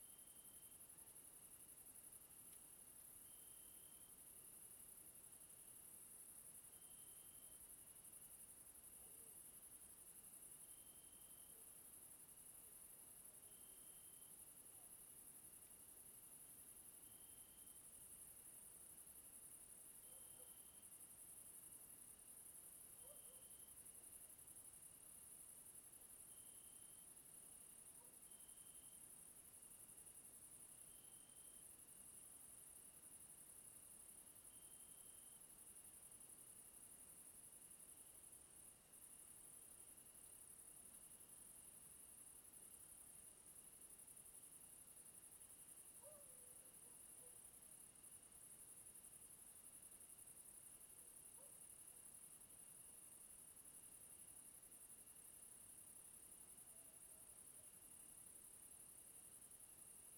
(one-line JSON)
{"title": "Chazotte, Arlebosc, France - Arlebosc - Ambiance estivale nocturne", "date": "2009-07-24 21:50:00", "description": "Arlebosc - Ardèche\nAmbiance estivale nocturne", "latitude": "45.04", "longitude": "4.66", "altitude": "413", "timezone": "Europe/Paris"}